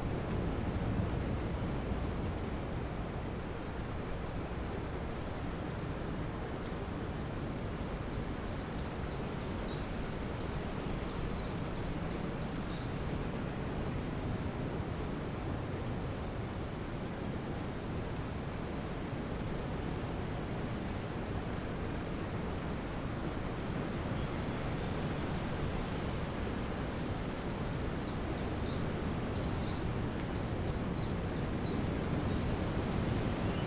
Vallée des Traouiero, Trégastel, France - Wind in the trees leaves [Valley Traouïero]
Le vent passant dans les arbres de la vallée. près du vielle arbre bizarre.
The wind passing through the trees of the valley. near the weird old tree.
April 2019.